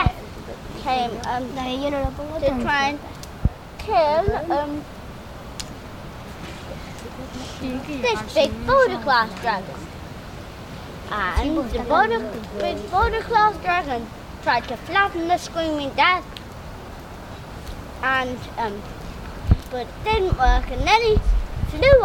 Children from Dorset Forest School tell stories around a fire in the woods, based on the clay animals they have made. Other children cook marshmallows.
Sounds in Nature workshop run by Gabrielle Fry. Recorded using an H4N Zoom recorder and Rode NTG2 microphone.
Dorchester, Dorset, UK, 6 August, 14:15